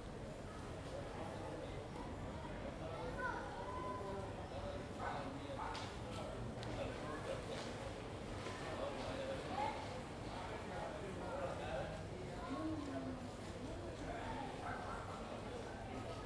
Recording made from a balcony. There's some slight rain at the beginning and then sounds from the street.